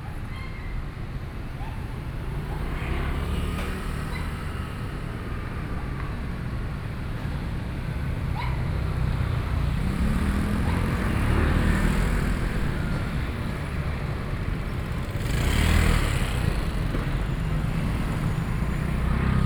Dayong Rd., Yancheng Dist. - Dogs barking
Traffic Sound, Dogs barking, In the Square